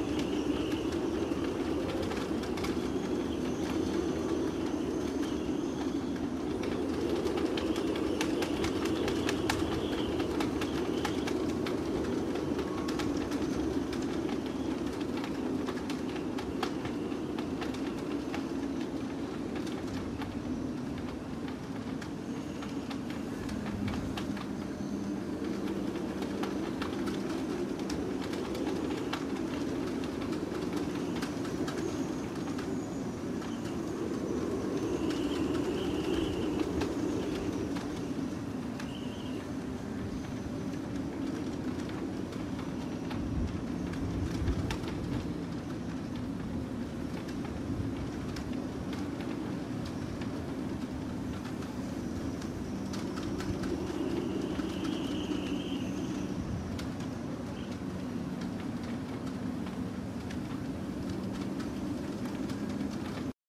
vent fort dans le port de plaisance de saint pierre